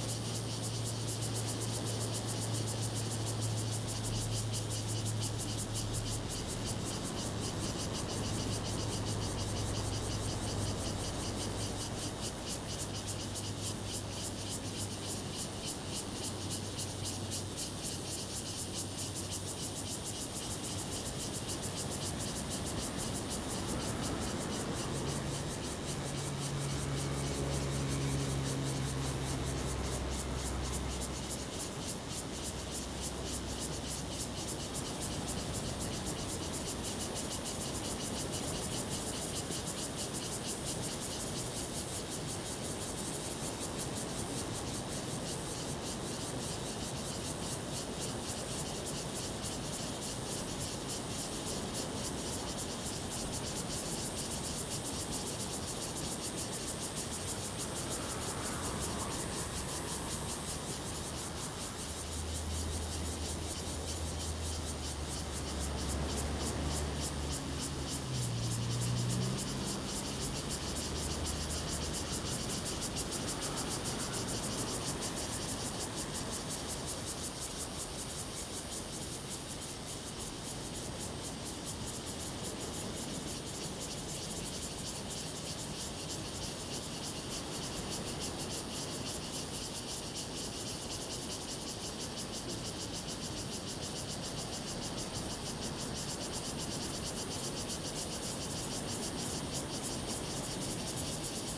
金樽遊憩區, Donghe Township - Cicadas sound
Cicadas sound, Sound of the waves, Traffic Sound
Zoom H2n MS +XY
Taitung County, Donghe Township, 花東海岸公路113號, 8 September